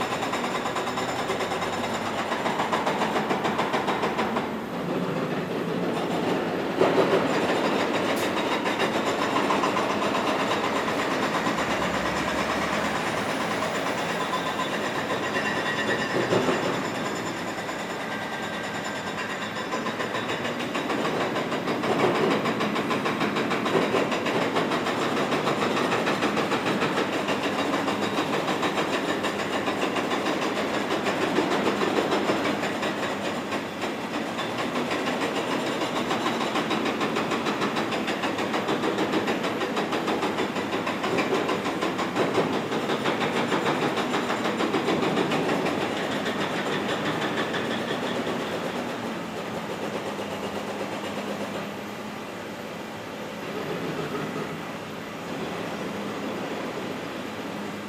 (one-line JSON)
{"title": "Ave, New York, NY, USA - Loud construction site on 5th Ave", "date": "2022-04-05 11:20:00", "description": "Loud construction site on 5th Avenue.", "latitude": "40.75", "longitude": "-73.98", "altitude": "26", "timezone": "America/New_York"}